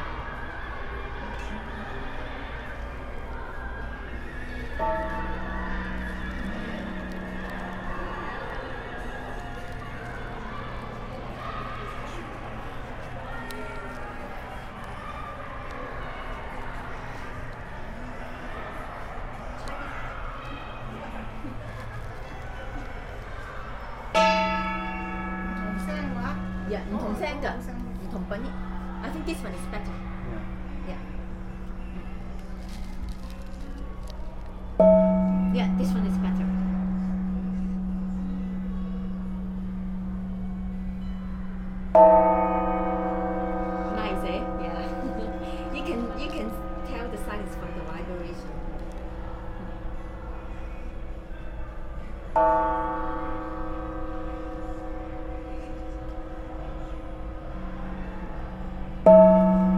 playing a gong in a shop in the Chinese culture center, Calgary